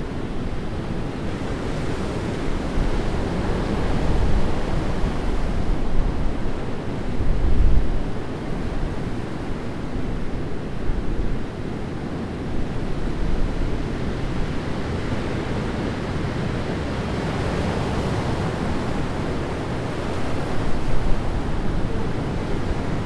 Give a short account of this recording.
Multiple recordings taken at various points along the beach. The Anne river enters the sea here; it can be heard at the start of the recording. Towards the end can be heard the sound of the waves sloshing beneath a concrete grille at the western end of the beach.